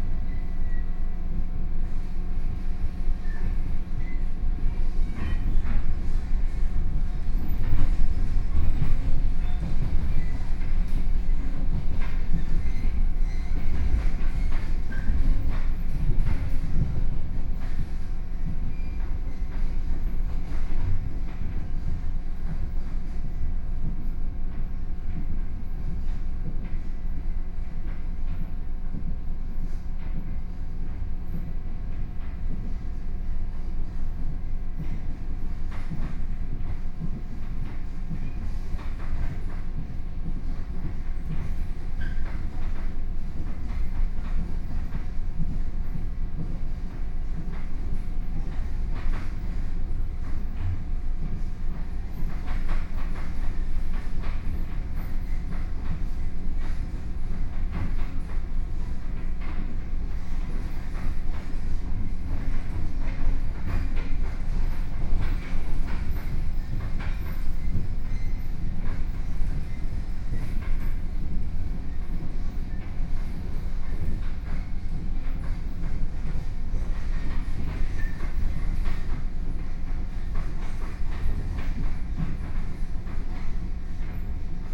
Dongshan Township, Yilan County - Local Train
from Luodong Station to Xinma Station, Zoom H4n+ Soundman OKM II